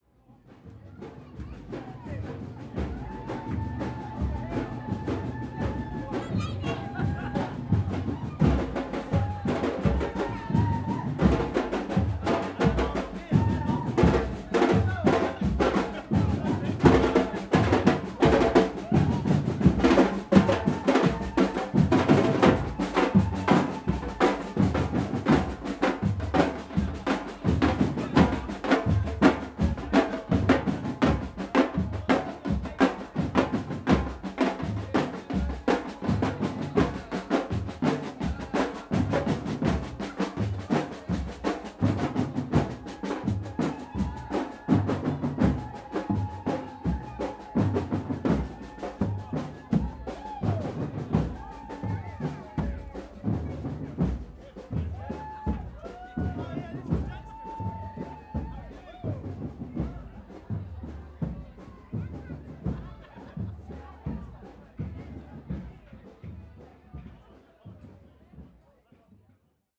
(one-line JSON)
{"title": "Croft Rd, Hastings, UK - Drummers at Jack in the Green Festival, Hastings, UK", "date": "2022-05-02 12:17:00", "description": "A procession of drummers in Hastings Old Town recorded during the Jack in the Green Festival. Recorded on Zoom H5 as the drummers marched along the narrow Croft Road.\nJack in the Green, originating during the 18th century, is a traditional annual event that celebrates the passing of winter. A parade of musicians, dancers, costumed characters and bogies escort Jack, a leafy, towering conical figure through the Old Town up to the West Hill where it is slain and the spirit of Summer released.", "latitude": "50.86", "longitude": "0.59", "altitude": "22", "timezone": "Europe/London"}